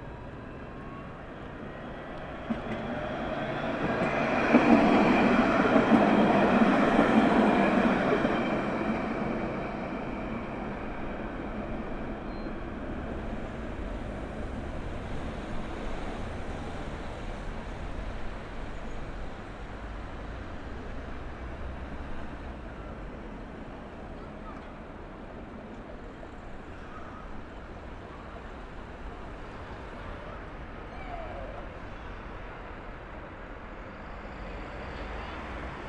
Cours de la République, Le Havre, France - Train - Train
Urban train passing and sound signals, traffic, people. Recorded with a AT BP4025 into a SD mixpre6.
22 March 2018, ~18:00